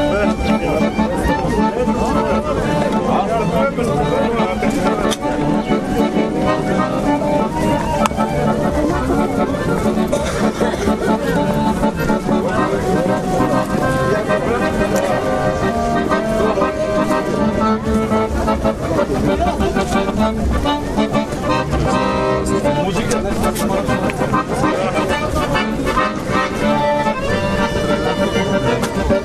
Sofia, Bitaka Flea Market - Bitaka I